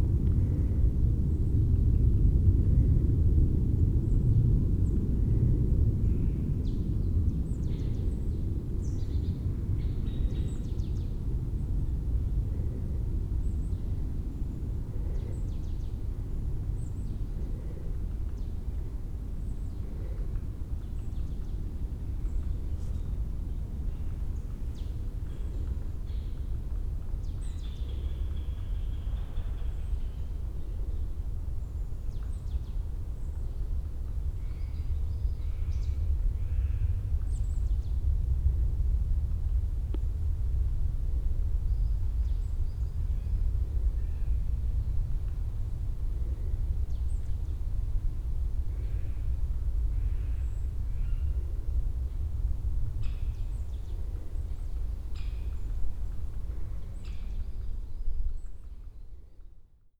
Morasko Nature Reserver, path - jet
roar of a military jet plane spreading over the forest.
Poznań, Poland, 13 February 2015